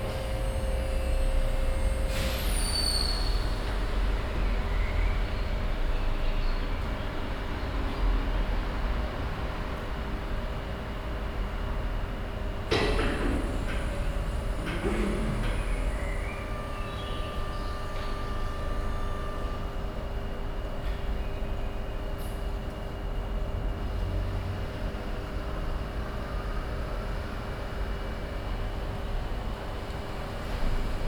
Heidkamp, Bergisch Gladbach, Deutschland - TÜV Rheinland - car security check
Inside the building of the local TÜV station. The sound of cars driving inside the open building and the sounds of different security check mechanics. In the background conversation voices of the stuff.
soundmap nrw - social ambiences and topographic field recordings